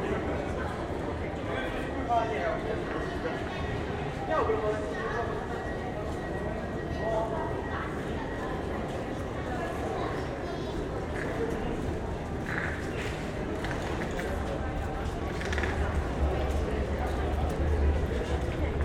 Podwale Grodzkie, Gdańsk, Polska - Underpass Near Train Station
Underpass near train station. Recorded with Sound Devices MixPre-6 II and Audio Technica BP 4025 inside Rycote BBG.
województwo pomorskie, Polska, 6 July 2022